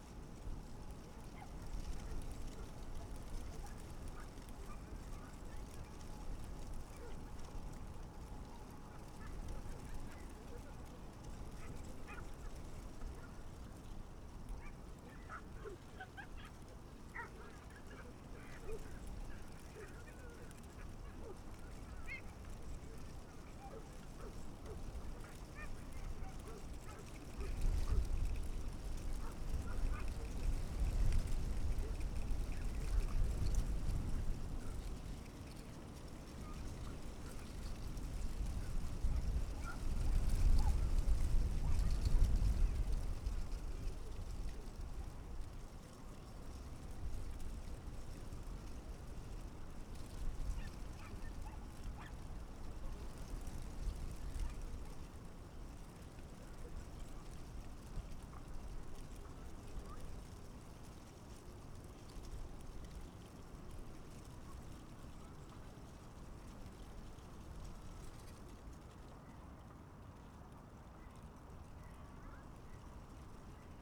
dry leaves of a willow (?) tree rustling in the wind
the city, the country & me: february 8, 2014
Berlin, Germany